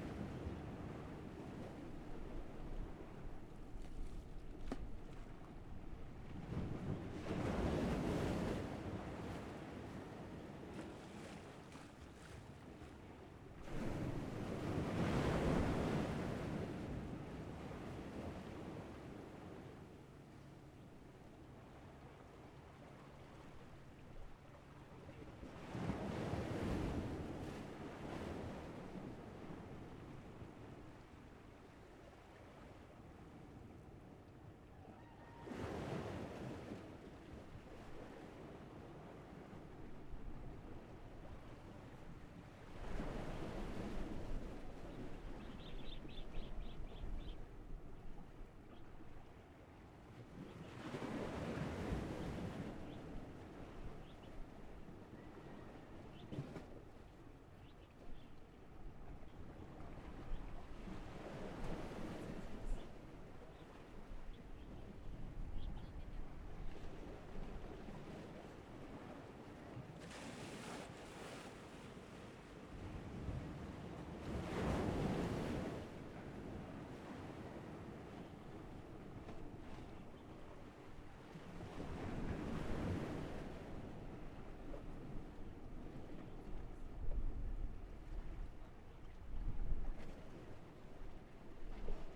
Chicken sounds, On the coast, Sound of the waves, Birds singing
Zoom H6 XY
Pingtung County, Taiwan, 1 November 2014